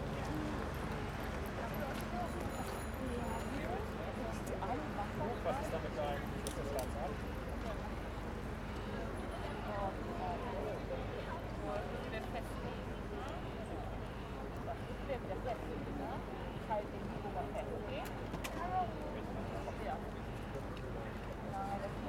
{"title": "Bahnhofsbrücke, Kiel, Deutschland - Street life", "date": "2017-08-05 11:28:00", "description": "Summer street life at the pedestrian area at the harbour, people walking and talking, cries of seagulls. iPhone 6s Plus with Shure Motiv MV88 microphone in 120° stereo mode.", "latitude": "54.32", "longitude": "10.13", "altitude": "2", "timezone": "Europe/Berlin"}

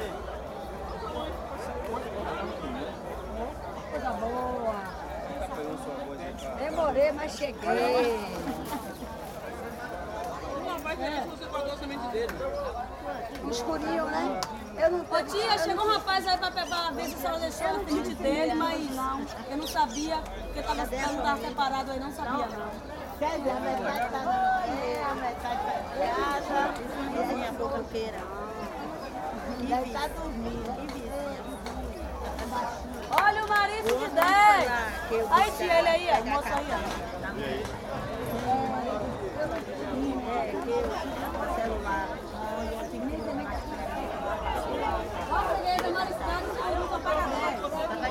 {"title": "Praça Vacareza, Cachoeira - BA, Brasil - Feira, Vendedora de marisco - Market Place, a seafood saleswoman.", "date": "2018-01-27 07:27:00", "description": "Feira, Sábado de manhã, uma vendedora de mariscos de coqueiros.\nMarket place, saturday morning, a seafood saleswoman.", "latitude": "-12.60", "longitude": "-38.96", "altitude": "10", "timezone": "America/Bahia"}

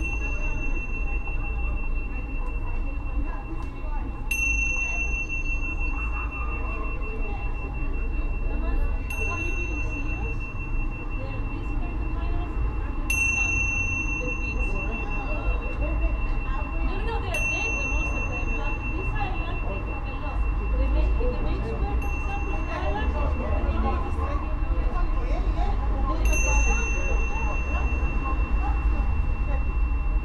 {"title": "while windows are open, Maribor, Slovenia - night flow of people", "date": "2015-05-29 22:04:00", "latitude": "46.56", "longitude": "15.65", "altitude": "285", "timezone": "Europe/Ljubljana"}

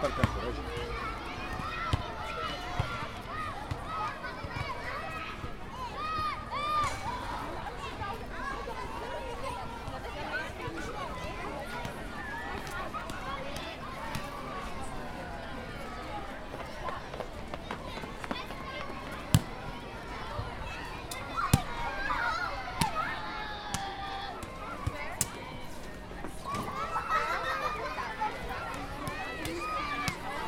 {"title": "Henrick de Keijzerplein, Amsterdam, Nederland - Speeltuin in de namiddag/ Playground in the afternoon", "date": "2013-09-27 16:15:00", "description": "(description in English below)\nEen rustig gebied in Amsterdam Zuid is op een vrijdagmiddag vol met spelende kinderen en hun ouders. Er is een enorme diversiteit aan leeftijd en nationaliteit.\nOn a Friday afternoon a quiet area in Amsterdam is crowded with parents and their playing children. There's a big diversity of age and nationalities.", "latitude": "52.35", "longitude": "4.90", "altitude": "3", "timezone": "Europe/Amsterdam"}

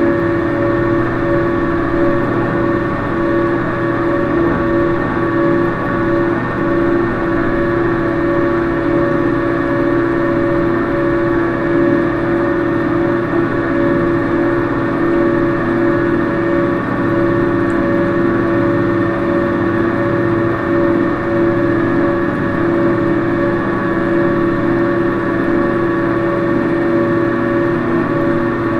{"title": "Mergenthalerring, Berlin, Deutschland - A100 - bauabschnitt 16 / federal motorway 100 - construction section 16: agitator", "date": "2014-01-09 11:28:00", "description": "concrete grey (recycling) water basin with agitator\nsonic exploration of areas affected by the planned federal motorway a100, berlin.\njanuary 2014", "latitude": "52.48", "longitude": "13.46", "altitude": "36", "timezone": "Europe/Berlin"}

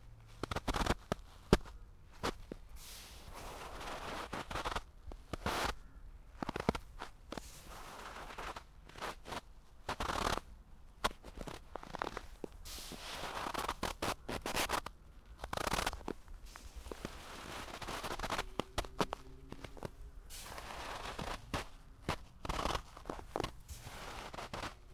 {"title": "Poznan, balcony - hydraulic snow", "date": "2013-01-23 10:18:00", "description": "a few steps on the balcony covered in snow.", "latitude": "52.46", "longitude": "16.90", "timezone": "Europe/Warsaw"}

